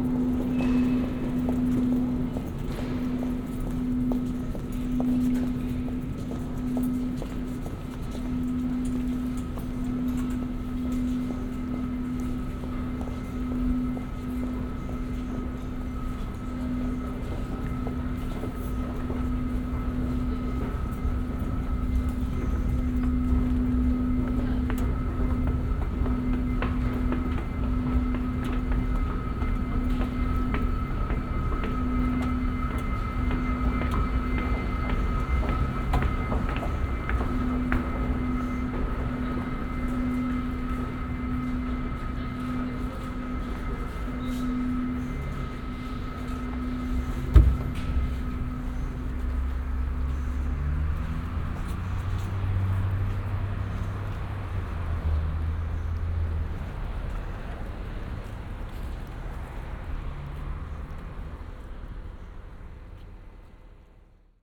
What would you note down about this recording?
equipment used: Olympus LS-10 w/ Soundman OKM II Binaural Mic, From the metro to the escalator to outside. Listen for footsteps.